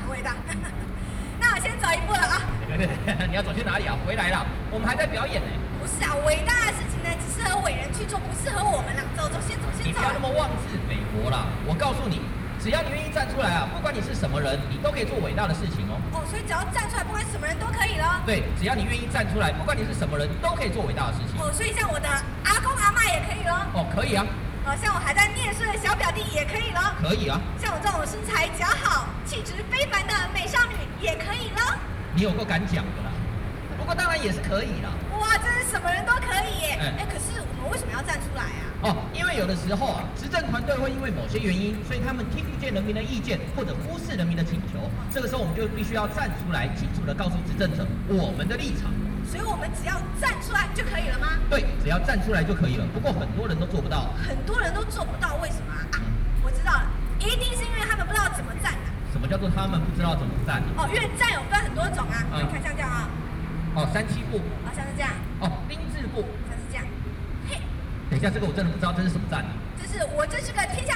Freedom Plaza, Taipei City - Crosstalk
Opposition to nuclear power
Binaural recordings
Taipei City, Taiwan, 9 August 2013, 20:48